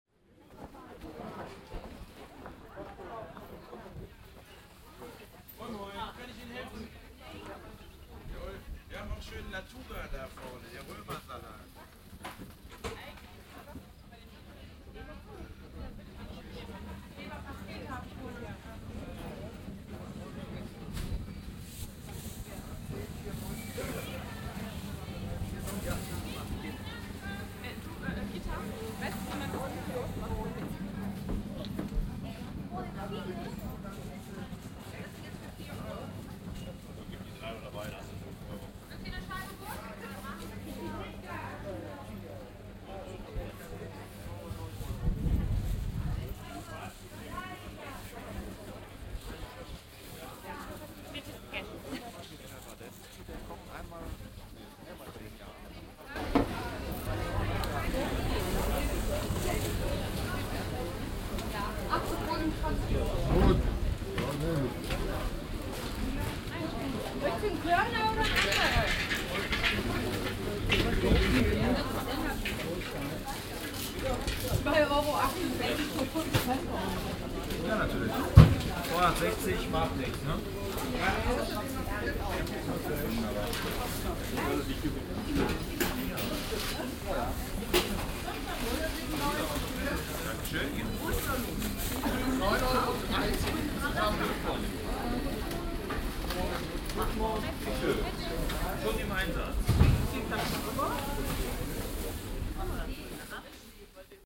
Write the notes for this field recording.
wochenmarkt, morgens im frühjahr 07, gang durch verschiedene stände, gespräche, schritte auf kopfsteinpflaster, windgeräusche, soundmap nrw: social ambiences/ listen to the people - in & outdoor nearfield recording